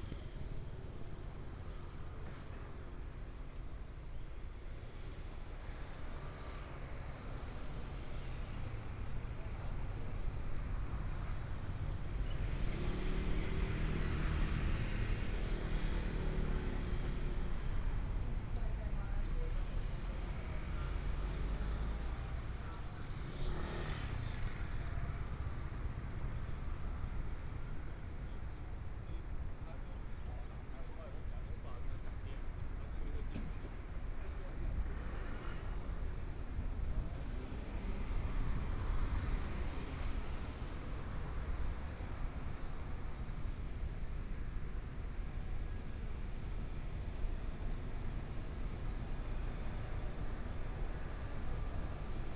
walking in the Street, Construction Sound, Birds sound, Traffic Sound, Environmental sounds
Please turn up the volume
Binaural recordings, Zoom H4n+ Soundman OKM II